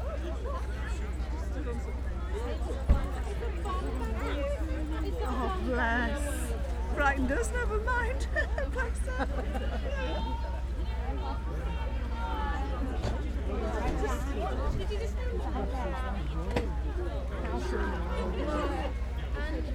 Back Ln, York, UK - Ryedale Show ... walking past the bandstand ...
Walking past the band stand ... open lavalier mics clipped to baseball cap ...
25 July